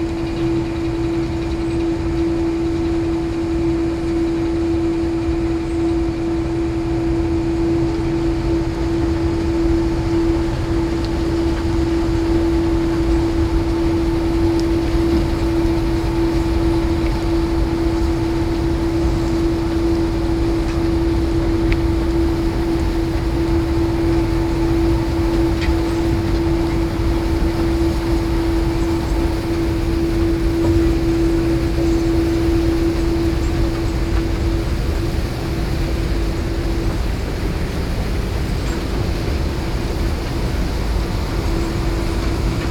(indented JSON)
{
  "title": "Mine Nástup Tušimice - Spořice, Czech Republic - Brown Coal KU 300 S Excavator at the location.",
  "date": "2012-08-23 11:24:00",
  "description": "Sound environment in the brown coal mine Nástup near Tušimice Power Plant. The mine uses for stripping operations KU 800, SRs 1500 Series TC2 and SChRs excavators. The stripped soil is transported by long haul 1800 mm wide conveyor belt to dumping machines of the same series. Coal in the open cast mine is extracted by KU 300 S and KU 800 N Series TC1 excavators.",
  "latitude": "50.41",
  "longitude": "13.35",
  "altitude": "293",
  "timezone": "Europe/Prague"
}